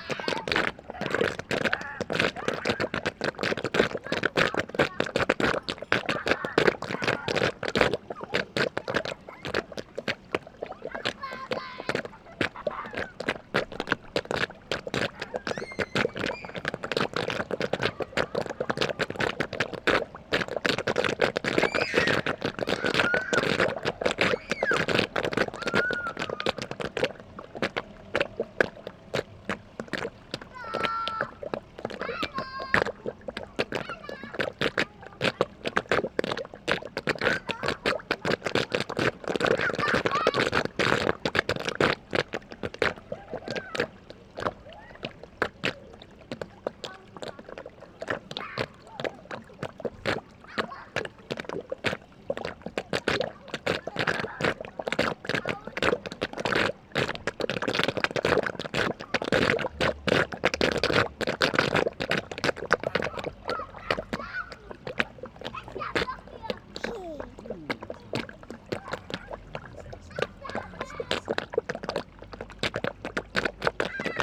greifswald, fischmarkt: brunnen - the city, the country & me: fountain
gurgling drain of a fountain, playing children
the city, the country & me: october 7, 2010